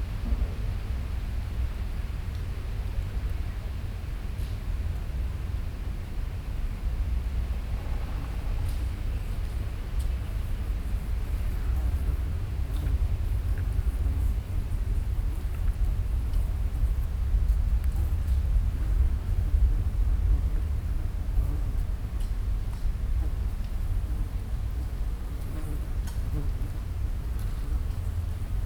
Heessener Wald, Hamm, Germany - in the forest under heat wave
Resting on a bench in the forest. The huge building site at the clinic can be heard. Above the tree tops a burning heat of some 40 degrees. Down here it’s quite pleasant. Yet yellow leaves are dropping all around as if it was autumn...